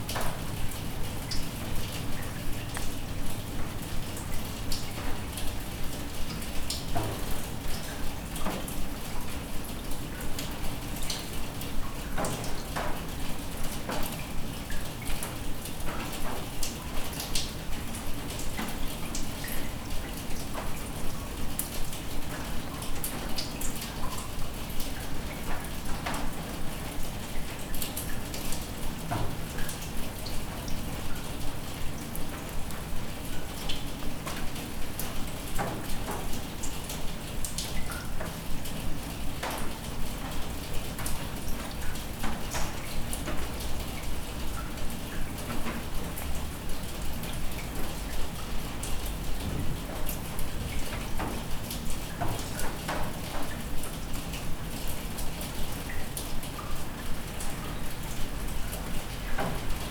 Innstraße, Innsbruck, Österreich - Raindrops in the courtyard
vogelweide, waltherpark, st. Nikolaus, mariahilf, innsbruck, stadtpotentiale 2017, bird lab, mapping waltherpark realities, kulturverein vogelweide, dripping rain from rooftop